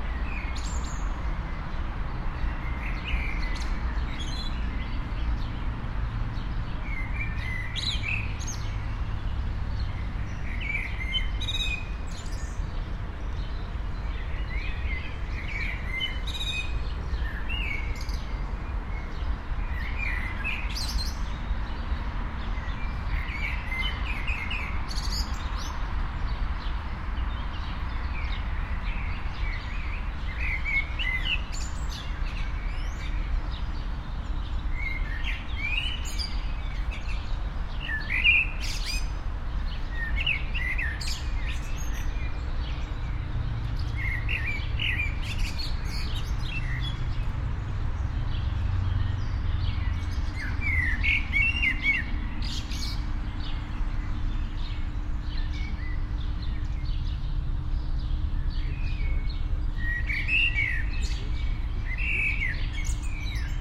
Alt-Treptow, Berlin, Germany - under tree crown
under tree crown ambiance with rain drops, leaves, birds, traffic ...
Deutschland, European Union, 26 May, 15:13